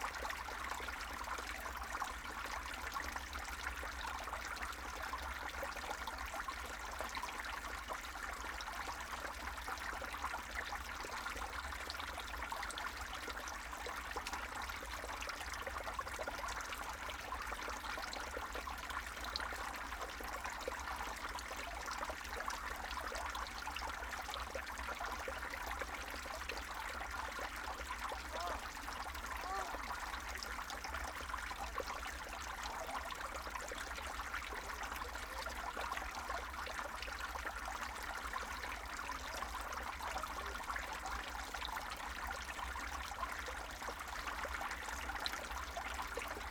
Rosemary's Playground, Woodward Ave. &, Woodbine St, Ridgewood, NY, USA - Snow melting at Rosemary's Playground
The last blizzard left Rosemary's Playground covered with a thick blanket of snow.
This recording captures the sound of the snow melting and going through the drainage system.